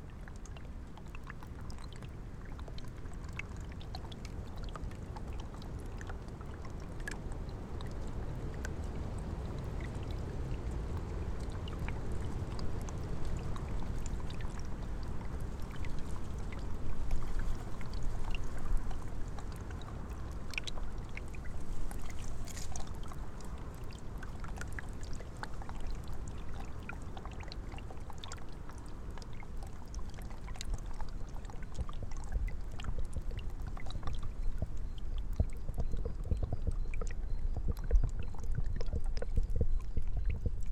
Vyžuonos, Lithuania, on ice and under
little, half frozen dtreamlet covered with tiny ice. the first half of the recording is made with two omni mics and the second half is made with two contact mics on ice and hydrophone in the streamlet